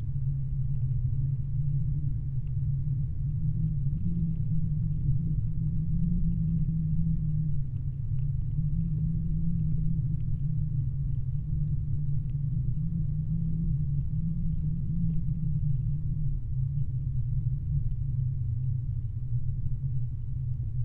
Voverynė, Lithuania, dead oak tree
Strong wind. Old, already dead oak tree. Listening with contact microphones.
Utenos rajono savivaldybė, Utenos apskritis, Lietuva, 6 November 2021, 16:10